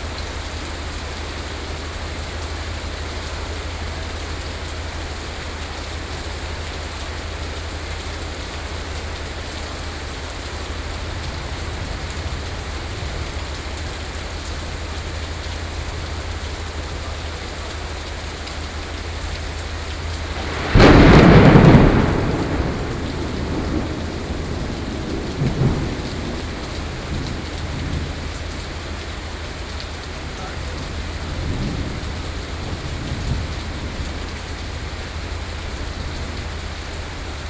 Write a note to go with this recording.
Traffic Noise, Thunderstorm, Sony PCM D50, Binaural recordings